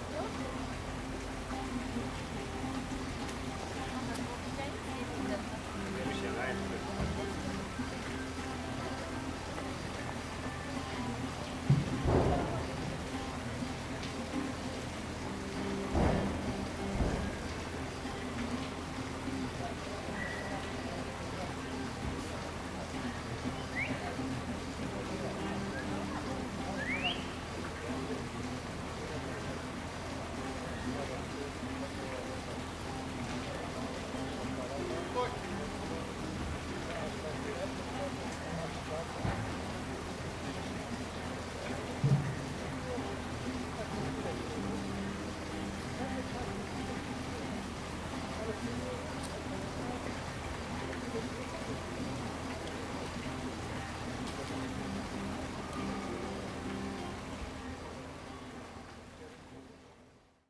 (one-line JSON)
{"title": "Śródmieście, Gdańsk, Polska - Neptune", "date": "2013-09-12 12:00:00", "description": "Afternoon at the central tourist spot in Gdańsk - The Neptune. Tourists, street performers, restaurant music and nearby construction. Recorded with Zoom H2N.", "latitude": "54.35", "longitude": "18.65", "altitude": "10", "timezone": "Europe/Warsaw"}